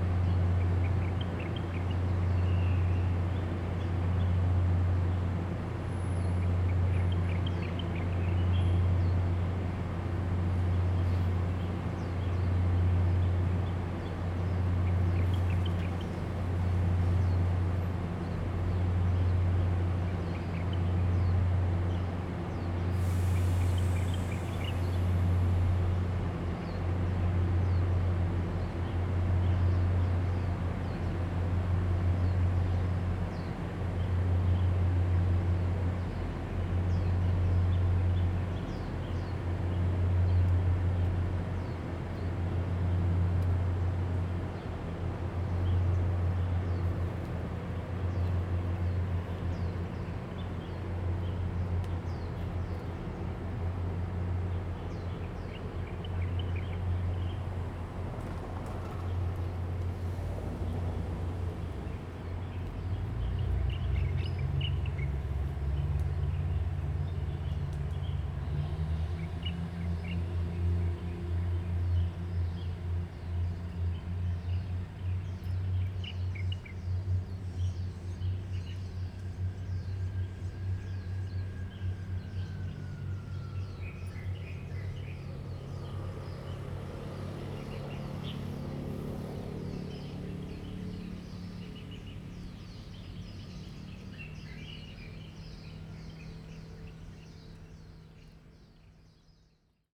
Zhiben Station, Taitung City - Next to the station
Next to the station, Birdsong, Traffic Sound, The weather is very hot
Zoom H2n MS +XY
Taitung County, Taiwan, 4 September, ~17:00